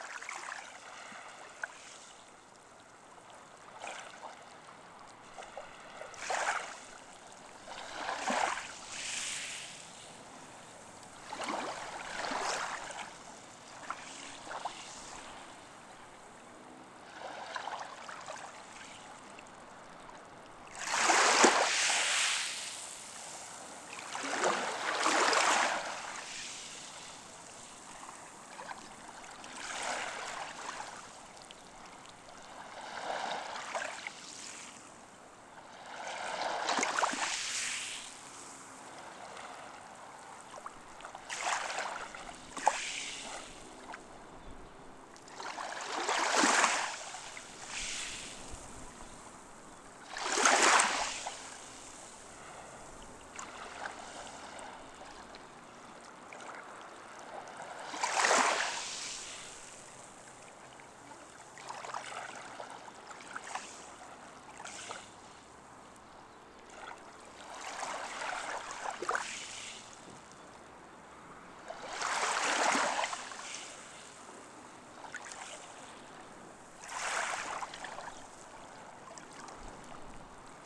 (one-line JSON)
{"title": "Kissamos, Crete, sea and sand", "date": "2019-04-30 12:35:00", "description": "om the seashore, waves playing with stones and sands", "latitude": "35.51", "longitude": "23.63", "altitude": "2", "timezone": "Europe/Athens"}